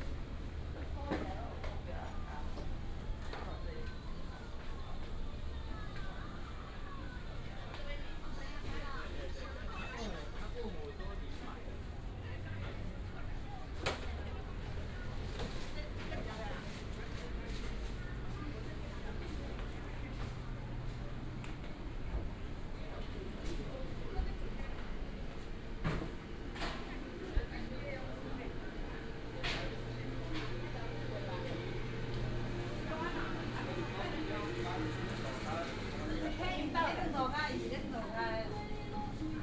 Lanzhou Market, Datong Dist., Taipei City - Walking in the market
Walking in the market, Traffic sound
Datong District, Taipei City, Taiwan, 9 April, 16:46